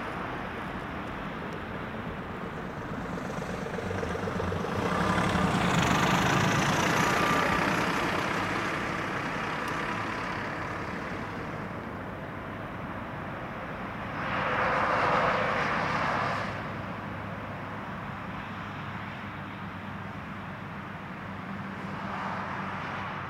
Cars going away from the parking, other cars and trucks passing by on the road, sunny windy day.
Tech Note : Sony PCM-D100 internal microphones, XY position.
Wallonie, België / Belgique / Belgien, 7 March 2022